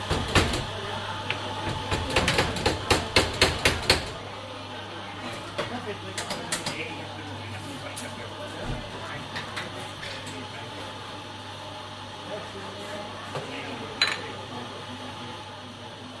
morgens im frühjahr 07, ausschankbetrieb
stimmengewirr, geschirr
project: :resonanzen - neanderland soundmap nrw: social ambiences/ listen to the people - in & outdoor nearfield recordingss